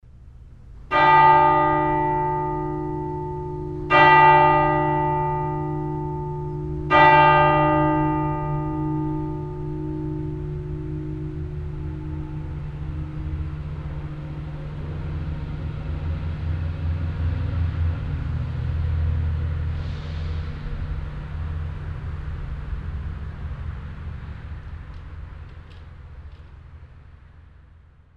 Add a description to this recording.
3uhr glocken der kirche st.gereon, soundmap nrw - social ambiences - sound in public spaces - in & outdoor nearfield recordings